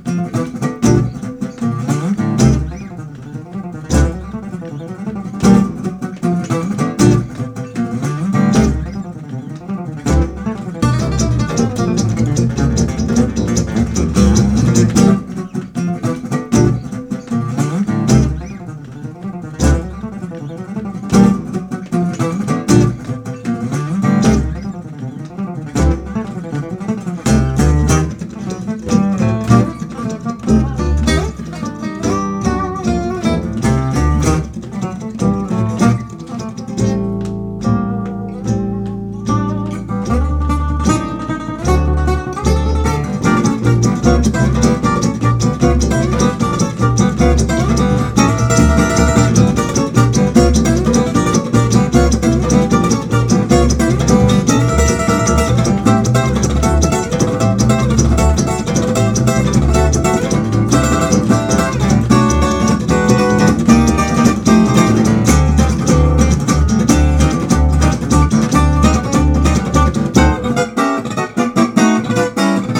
Konzert auf engstem Raum in der "Viertelbar" (nomen est omen): Joscho Stephan und Band. Besetzung: Gitarre, Rhythmusgitarre, Kontrabass. Ist Joscho Stephan der reinkarnierte Django Reinhardt? Das Stück heißt "Stomp". Leider nur das iPhone als Aufnahmegerät dabei gehabt ...